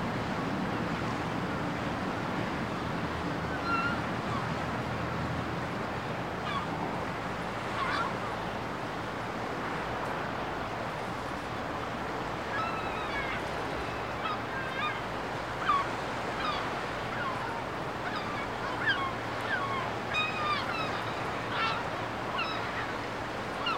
This recording was captures in the early morning (6am) by the side of the Douro river, between the cities of Porto and Vila Nova de Gaia.